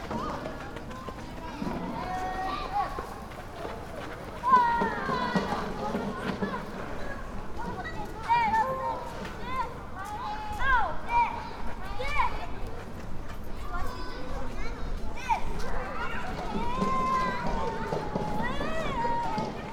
Tokyo, Arakawa, Higashinippori district - playground
kids playing in community playgroud